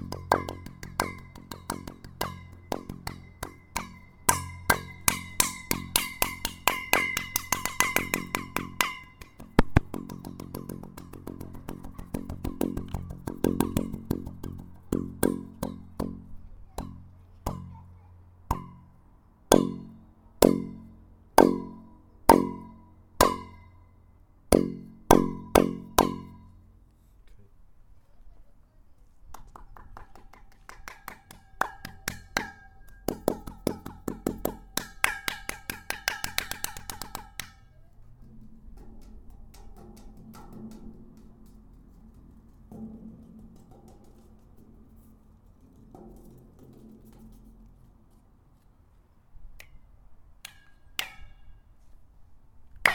Taka-Töölö, Helsinki, Finland - Playing Sibelius Monument with hands
Binaural recordings. I suggest to listen with headphones and to turn up the volume.
Here I'm doing some "sound-tests" with Sibelius' monument. It's like a giant organ made with cylindrical metallic tubes, but it sounds good.
Recordings made with a Tascam DR-05 / by Lorenzo Minneci